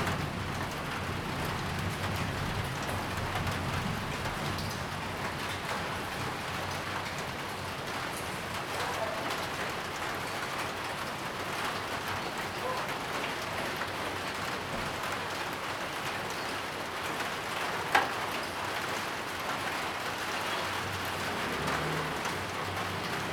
大仁街, Tamsui District, New Taipei City - heavy rain
thunderstorm, Traffic Sound
Zoom H2n MS+XY